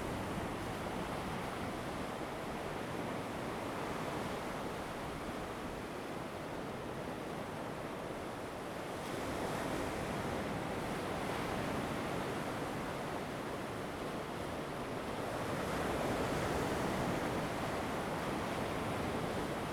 界橋, Chenggong Township - Standing on the rocky shore
sound of the waves, At the seaside, Standing on the rocky shore
Zoom H2n MS+XY